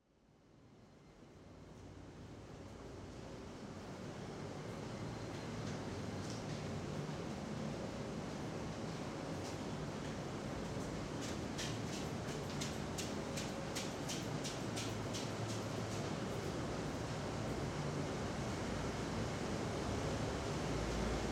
Cervecería Quilmes - Caminata por la Fábrica 2
Caminando por la fábrica de cerveza Quilmes (2).
19 October 2020, ~15:00